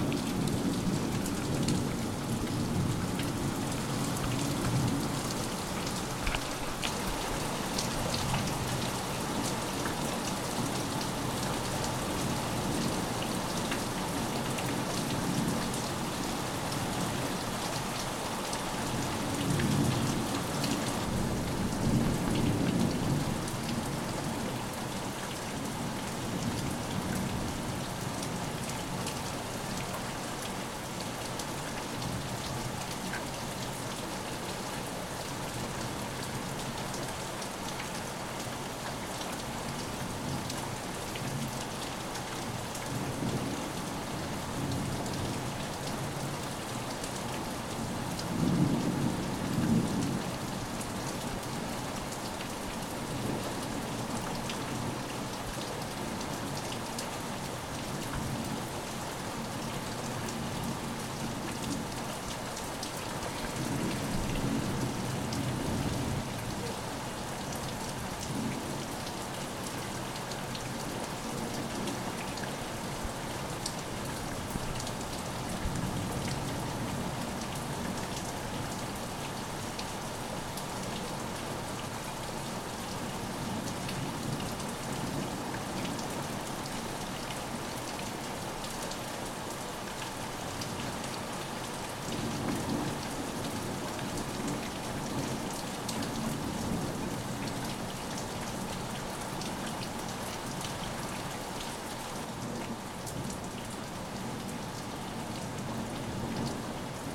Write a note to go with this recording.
School yard, Passing Rainstorm- classified as Severe storm with wind gusts of 50 knts. Recording made under shelter as storm passes and winds are decreasing. Note wind gusts